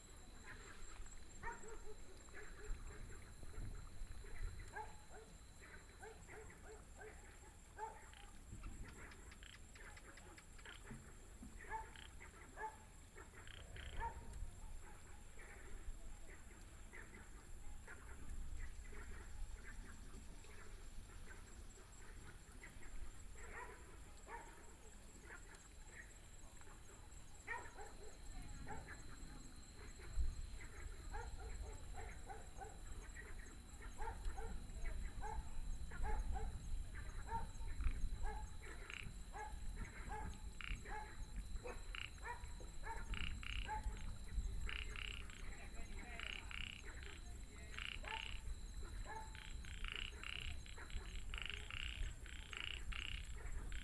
都蘭村, Donghe Township - Frogs
Frogs sound, Dogs barking, Birdsong, Small village